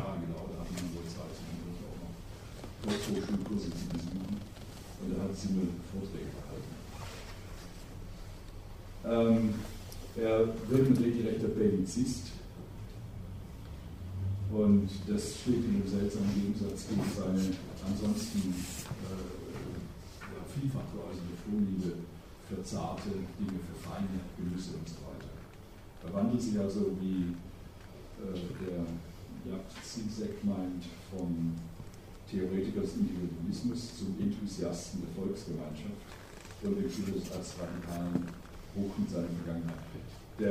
Mohrenstr., gsg, Simmel - Mohrenstr., gsg, Simmel, 7